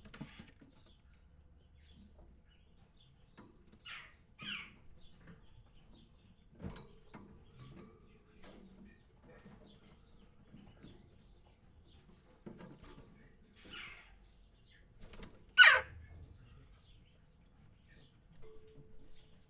Ottignies-Louvain-la-Neuve, Belgique - Birdsbay, hospital for animals
Birdsbay is a center where is given revalidation to wildlife. It's an hospital for animals.
0:00 to 3:30 - Nothing's happening. Increasingly, a jackdaw asks for food.
3:30 to 4:55 - Giving food to the four jackdaws.
4:55 to 6:43 - Giving food to the three magpies.
27 June 2016, 20:00